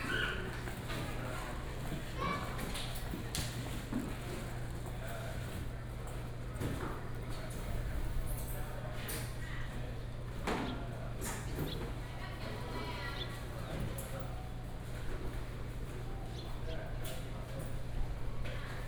將軍漁港海鮮魚市, Jiangjun Dist., Tainan City - Seafood fish market
In the Seafood fish market, Birds sound, Finishing the goods